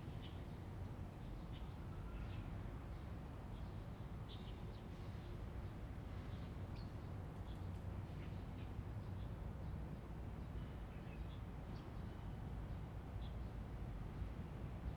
中琉紀念公園, Hualien City - in the Park
in the Park, Traffic Sound, Birds, Sound from the railway station
Zoom H2n MS+XY
Hualien City, Hualien County, Taiwan, August 2014